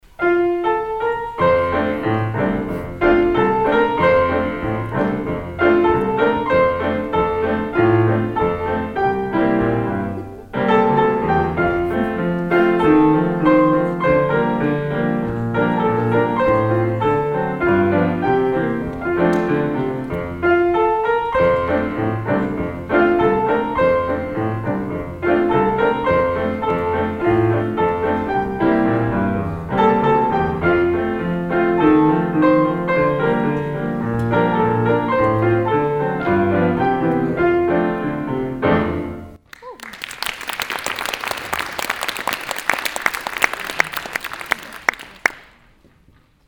refrath, waldorfschule, aula, vorspiel - refrath, waldorfschule, aula, vorspiel 06
alljährliches klavier vorspiel der Klavierschüler in der schulaula.hier: die weihnachtslieder auswahl
soundmap nrw - weihnachts special - der ganz normale wahnsinn
social ambiences/ listen to the people - in & outdoor nearfield recordings